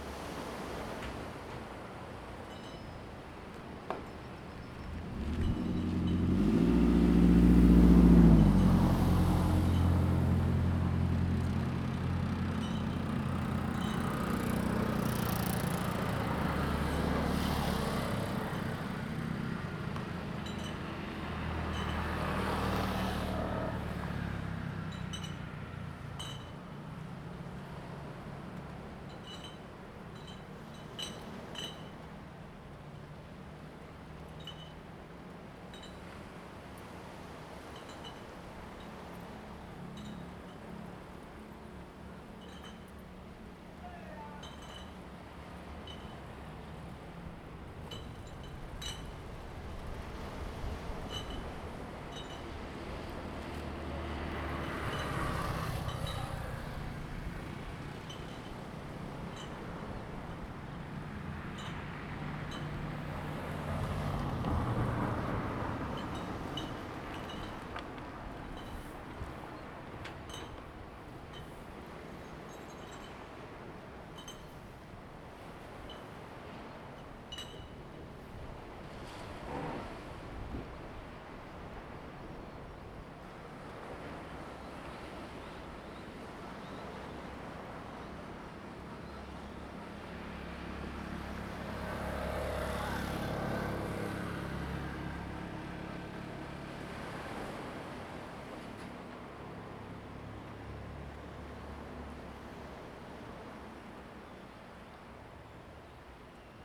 Jimowzod, Ponso no Tao - On the road
sound of the waves, On the road, An old man is finishing the bottle, Traffic Sound
Zoom H2n MS +XY
Taitung County, Taiwan, 30 October 2014